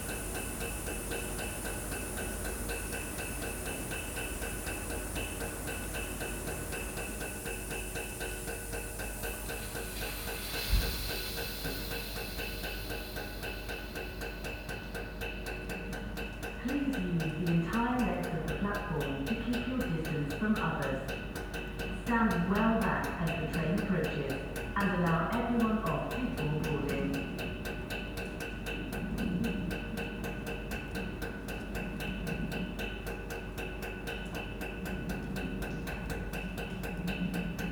Ipswich Station, Burrell Rd, Ipswich, UK - Rhythmic train on Platform 4 in cold drizzle
Waiting for my connection on a dark, cold, wet almost deserted station with a covid face mask that had already been on far too long. Beside me this train ticked away, skipping a beat every now and again, quite oblivious to the crazy world it existed in.
2 recordings joined together.
England, United Kingdom, 2 October